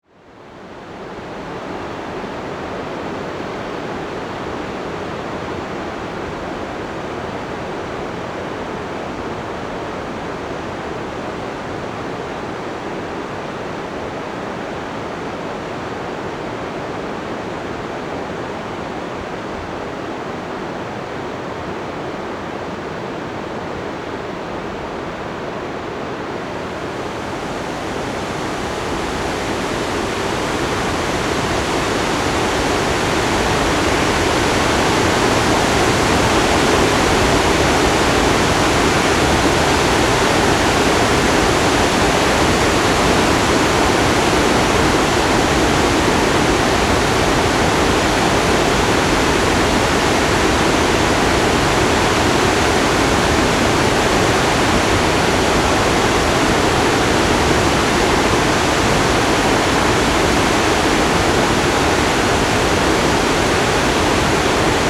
直潭壩, Xindian Dist., New Taipei City - Next to dam
Next to dam
Zoom H4n + Rode NT4